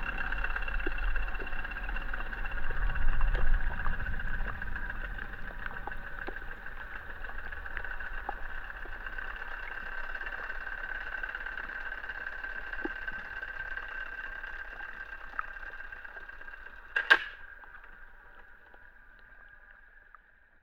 Ventspils, Latvia, arriving boat through hydrophone
Listening arriving boat through underwater microphone
Kurzeme, Latvija